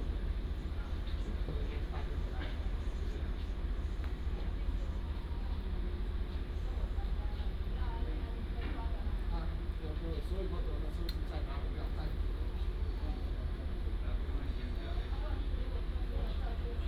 Changhua Station, Changhua City - At the station platform
At the station platform, The train passes by, Station Message Broadcast, Train arrives and leaves